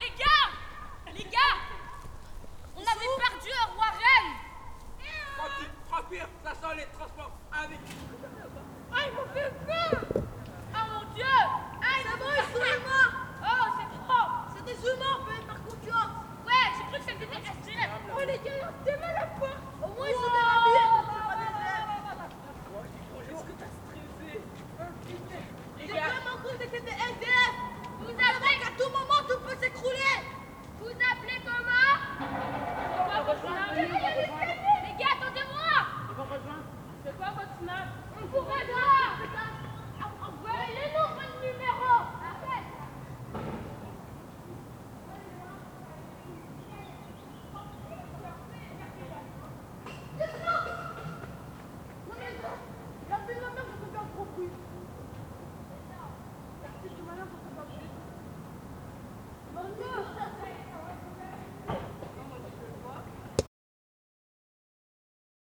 This place will be (most probably) soon destroyed. The real estate developer Matexi plans to build luxury housing on an area of more than 2 hectares of green space in the heart of the city of Liege.
In the meantime, kids are playing around, they imagine some stories and some souls in the building.
(we were recording from the window and they were in the grass below)
Wallonie, België / Belgique / Belgien, 7 July, 16:54